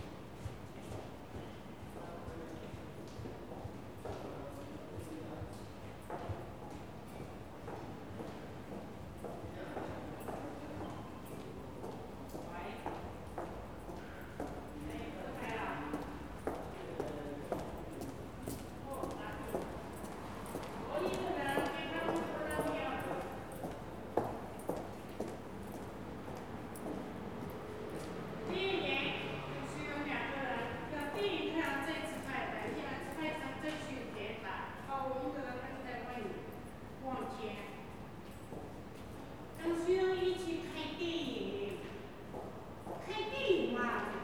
Gaillon, Paris, France - Passage Choiseul, Paris
Quiet sounds inside the Passage Choiseul, Paris.
The occasional sounds of footsteps crossing the arcade.
The stores were closed because of the national holiday - Bastille Day.
Zoom H4n